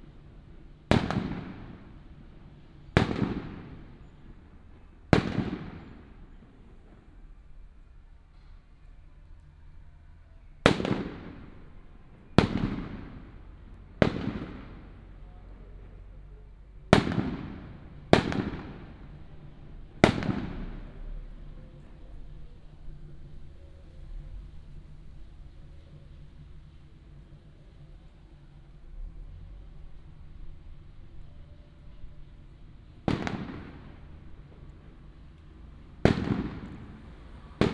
新吉里竹圍子社區, Huwei Township - in the Park
Firecrackers and fireworks, Matsu Pilgrimage Procession
Yunlin County, Huwei Township, 3 March, ~16:00